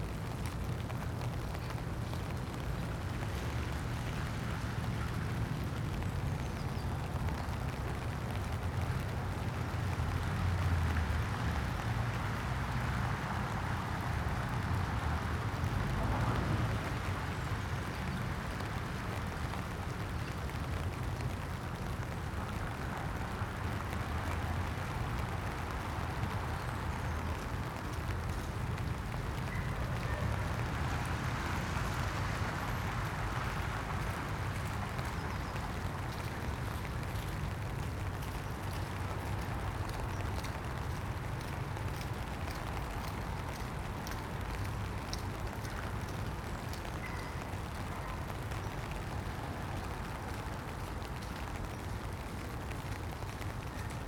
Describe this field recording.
High Street, In the car park two cars sit, with their engines running, I carry on into the park, Stand under my umbrella, a light sleet falling, Blackbirds chase, on the far side of the grass